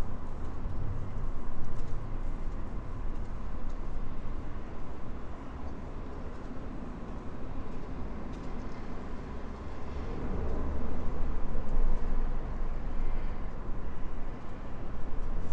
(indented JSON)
{
  "title": "Vilnius, Lithuania, standing under Liubertas bridge",
  "date": "2021-03-03 14:15:00",
  "description": "Standing under bridge, listening to cityscape.",
  "latitude": "54.69",
  "longitude": "25.26",
  "altitude": "80",
  "timezone": "Europe/Vilnius"
}